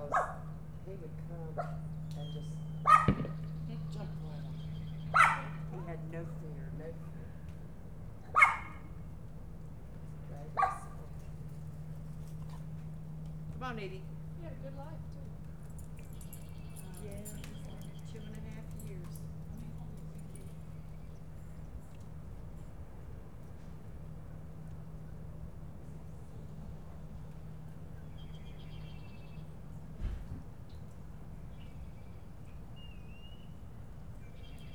Topsail Island - A Good Life
A family's conversation is interrupted by hunters.
NC, USA, 23 December 2017, 10:42